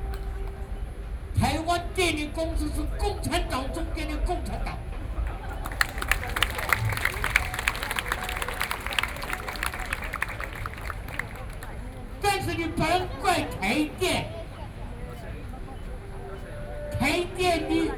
Liberty Square, Taipei - No Nuke
Antinuclear Civic Forum, Energy experts are well-known speech, Sony PCM D50 + Soundman OKM II